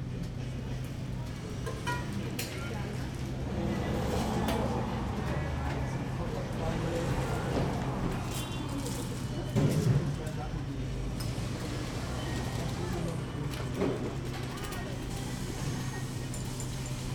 venloer / körnerstr. - cafe da paulo
italian cafe, coffee break